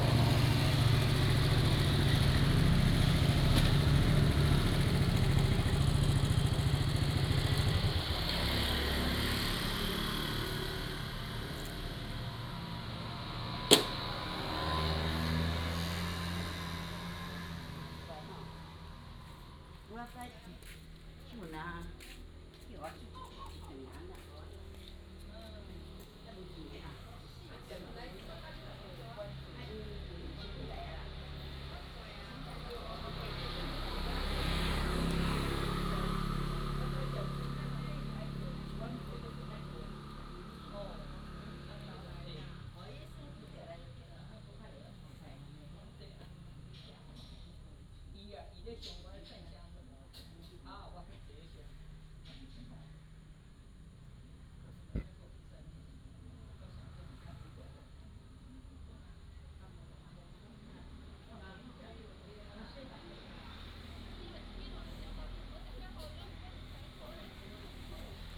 碧雲寺, Hsiao Liouciou Island - in front of the temple
In the square in front of the temple, Traffic Sound
Zoom H2n MS+XY
Pingtung County, Taiwan